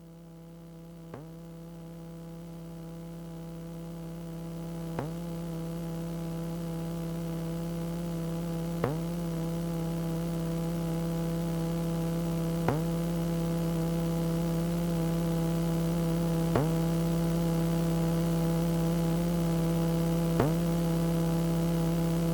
{"title": "Ottignies-Louvain-la-Neuve, Belgium - Curious lift lament", "date": "2018-02-23 20:36:00", "description": "This is the magnetic field song of a lift. I don't really understand why this lift is crying this strange complaint waiting to leave its place. After 1:30mn, the lift is used by an old woman, who was looking at me very frightened.", "latitude": "50.67", "longitude": "4.62", "altitude": "117", "timezone": "Europe/Brussels"}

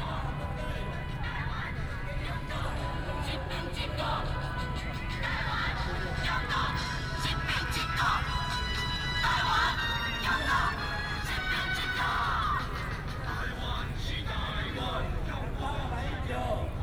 {"title": "Jinan Rd., Taipei City - protest", "date": "2014-03-21 19:43:00", "description": "Walking through the site in protest, People and students occupied the Legislative Yuan\nBinaural recordings", "latitude": "25.04", "longitude": "121.52", "altitude": "11", "timezone": "Asia/Taipei"}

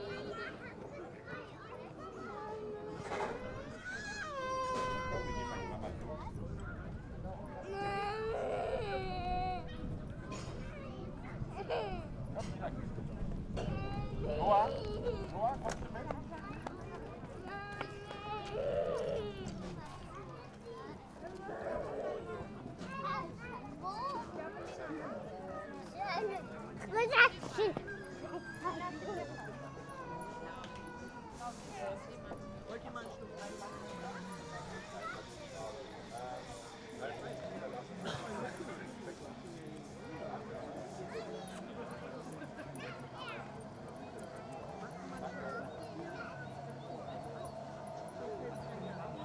{"title": "Hobrechtsfelde, Panketal, Deutschland - outdoor cafe, weekend ambience", "date": "2021-10-02 16:07:00", "description": "Hobrechtsfelde, Speicher, former agricultural storage building, now a recreation place with a cafe bar, rest place for hikers and playgrounds for kids, ambience on a warm Saturday afternoon in early autumn\n(Sony PCM D50)", "latitude": "52.67", "longitude": "13.49", "altitude": "62", "timezone": "Europe/Berlin"}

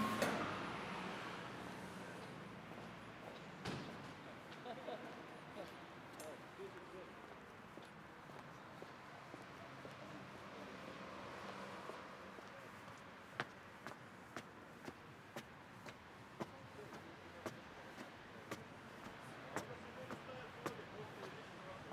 {"title": "zamet, centar, rukomet", "description": "walking around new sport center", "latitude": "45.34", "longitude": "14.38", "altitude": "108", "timezone": "Europe/Berlin"}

